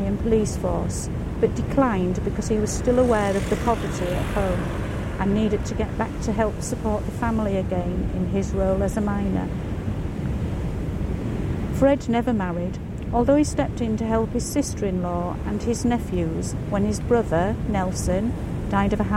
Royal Albert Dr, Scarborough, UK - freddie gilroy and the belsen stragglers ...
freddie gilroy and the belsen stragglers ... on the north bay promenade at Scarborough is possibly a three times size of an old man looking out to sea ... he sits cradling a walking stick sat on an equally impressive seat ... many people stop to read the information board ... so that is what we did ... about 3:20 we get dumped on by a large wave hitting the sea wall ...
4 September 2015